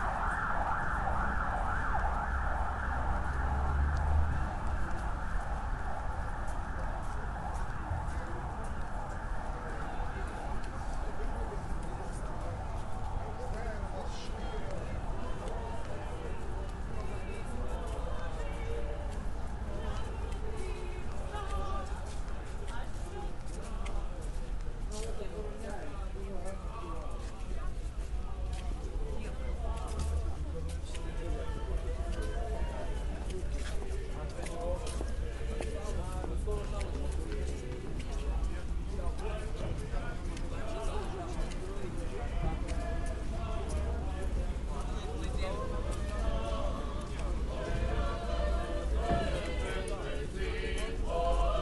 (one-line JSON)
{"title": "l'viv, prospekt svobody - police et chants de noël", "date": "2013-01-15 22:56:00", "description": "Prospekt svobody. Traditionnal chants, ukrainian language\nSingers gather during the period of christmas to share their chants with the population. They walk back and forth on the square, on the melted crispy snow", "latitude": "49.84", "longitude": "24.03", "altitude": "278", "timezone": "Europe/Kiev"}